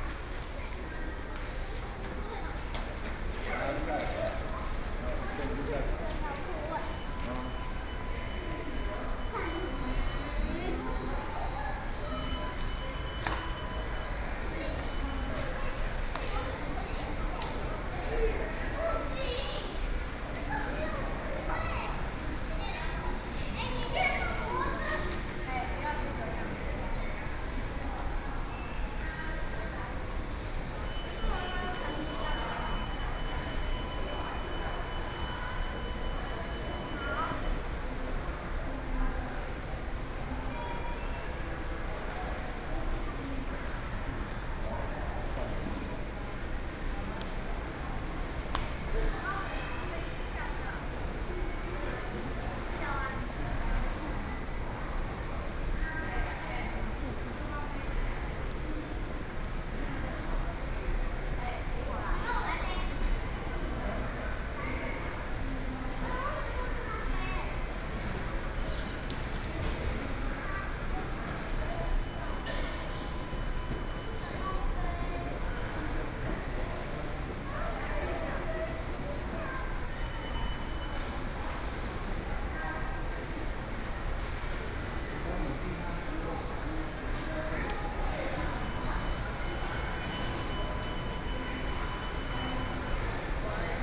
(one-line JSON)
{"title": "ChiayiStation, THSR - Station hall", "date": "2013-07-26 19:46:00", "description": "in the Station hall, Sony PCM D50 + Soundman OKM II", "latitude": "23.46", "longitude": "120.32", "altitude": "14", "timezone": "Asia/Taipei"}